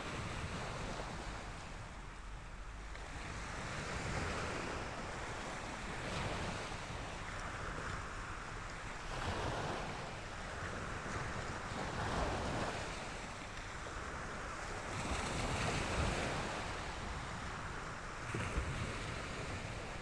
Platanias, Crete, sea at the marina
mics pointing to the sea
Platanias, Greece, 26 April 2019